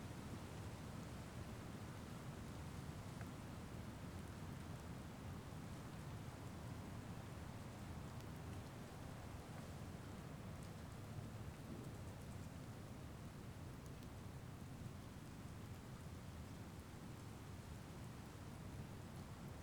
Willow River State Park Boat Launch Parking Lot
Recorded on a snowy March day in the parking lot of the boat launch at Willow River State Park. Wet snow flakes can be heard falling on the ground
Recorded using Zoom h5
Saint Croix County, Wisconsin, United States, 23 March 2022, 13:19